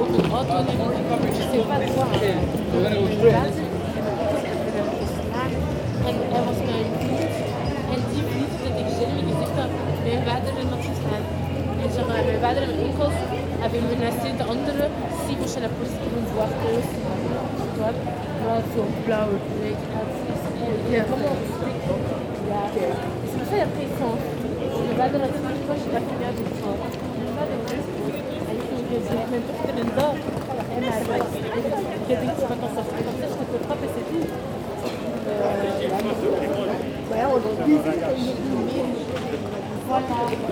Bruxelles, Belgium - The commercial artery
The awful rue Neuve ! Long and huge commercial artery, henceforth the same as all cities. Crowded with walkers, bad street musicians, people who enjoy the sun and feel good.